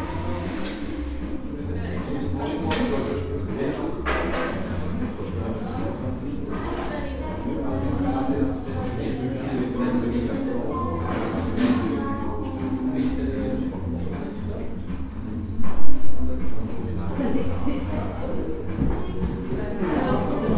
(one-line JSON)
{"title": "Zürich West, Schweiz - Wurst & Moritz", "date": "2014-12-31 12:30:00", "description": "Wurst & Moritz, Hardstr. 318, 8005 Zürich", "latitude": "47.39", "longitude": "8.52", "altitude": "409", "timezone": "Europe/Zurich"}